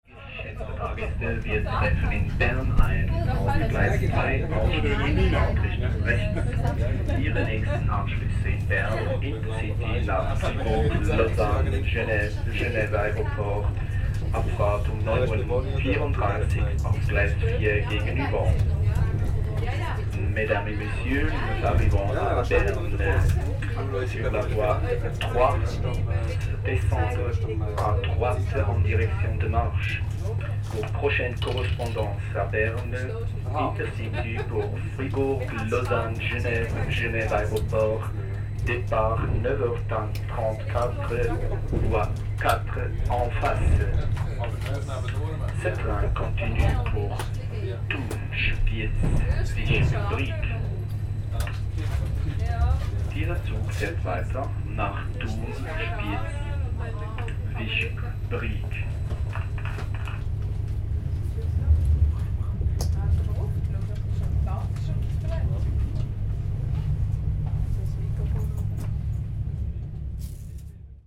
Ankunft und Durchsage des Zugbegleiters in Bern Hauptbahnhof, Verbindungshinweise, die Stimme aus dem off, Zuggeräusche, Passagiere im Gespräch
Bern, Schweiz